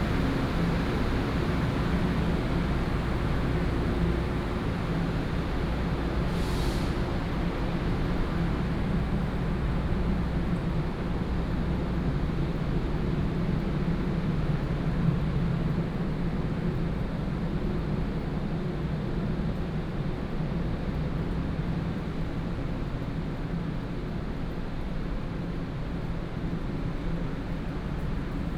Keelung Station, 基隆市仁愛區 - Arrival Terminal
Trains, Arrival Terminal, From the station platform towards the exit